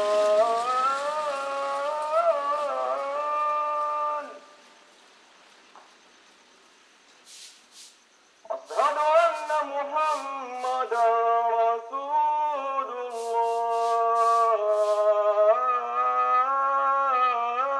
Bamako, Mali - Mosquée Mohamoud Dicko - Bamako
Bamako - Mali
Quartier de Badala Bougou
Mosquée Mohamoud Dicko
Appel à la prière de 4h30 AM